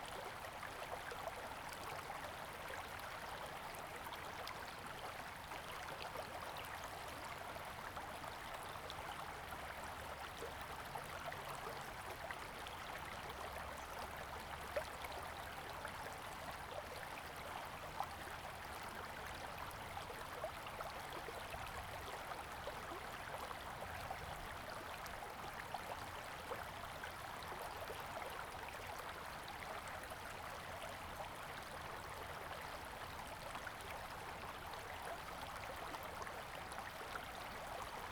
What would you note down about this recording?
stream, Beside the river, Bird call, Zoom H2n MS+XY